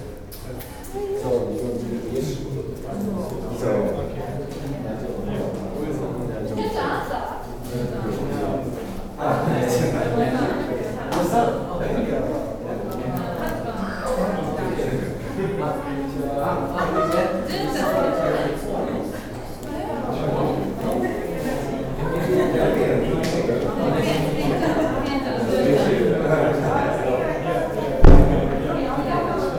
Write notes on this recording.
opening crowd at loft based gallery shugoarts - here at an exhibition of artist Jun Yang, international city scapes - social ambiences and topographic field recordings